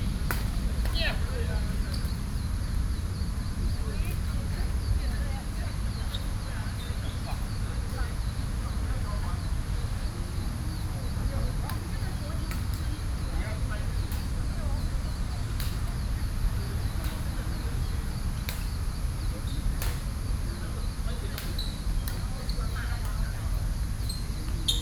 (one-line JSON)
{"title": "Perfection park, Taipei - Playing badminton", "date": "2012-06-23 07:51:00", "description": "Playing badminton, Sony PCM D50 + Soundman OKM II", "latitude": "25.10", "longitude": "121.54", "altitude": "14", "timezone": "Asia/Taipei"}